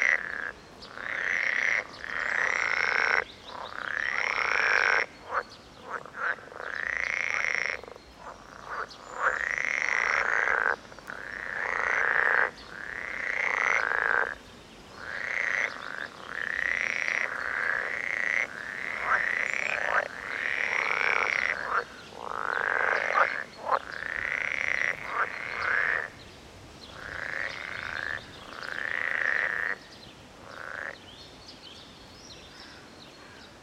Frog pond, Mooste, Estonia - frog pond with Ranna lessonae
local frog pond in Mooste with Rana lessonae or 'pool frog'.